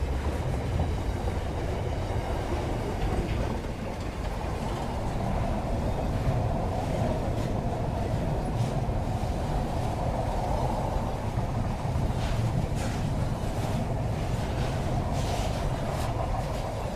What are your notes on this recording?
Freight Train from Adelaide, South Australia heading to Melbourne, Victoria. This particular train was about 1,300 metres long. Recorded with two Schoeps CCM4Lg in ORTF configuration inside a Schoeps/Rycote stereo blimp directly into a Sound Devices 702 recorder. Recorded at 20:30 on 19 March 2010